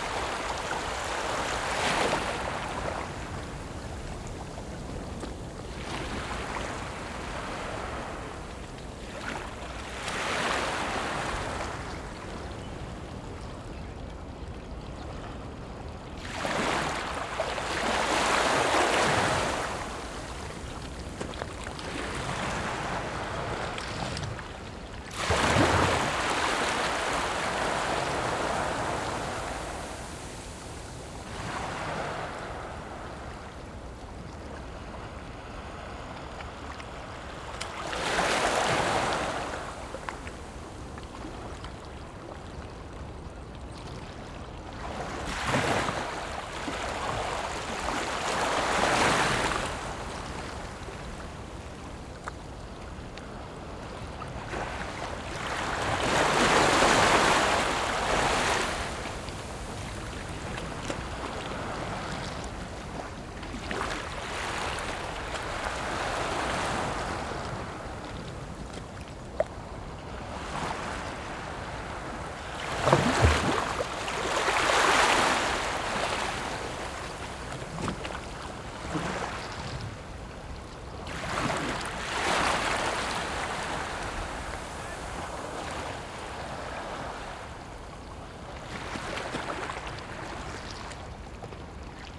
頭城鎮大里里, Yilan County - sound of the waves
Sound of the waves
Zoom H6 MS mic + Rode NT4